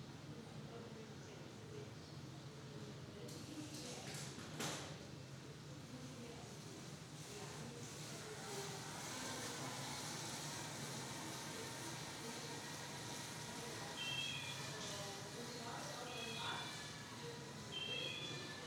Mittelalterliche Gasse mit Fachwerkhäusern, Fußgängerzone.
ein Chor übt, Fußgänger...
a choir is practicing, pedestrians...
(Tascam DR-100MX3, EM172 (XLR) binaural)
Baden-Württemberg, Deutschland, 16 October 2019